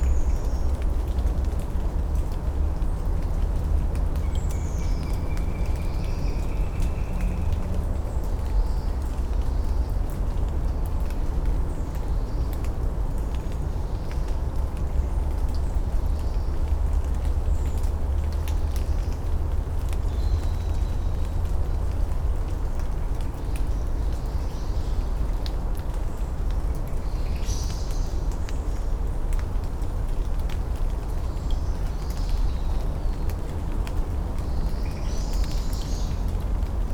Morasko Nature Reserve - small dam
morning ambience in the forest on a damp autumn morning. water drops falling off the leaves into dried, muddy stream. (roland r-07)
wielkopolskie, Polska, 27 September